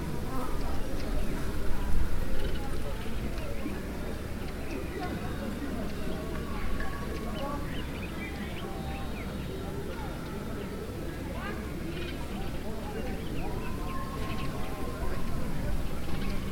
Simatelele, Binga, Zimbabwe - Sounds near the school grounds...
… I walked off a bit from the meeting of the women, towards the school… midday sounds from the street, from a nearby borehole, and from the school grounds...
17 June 2016, ~12:00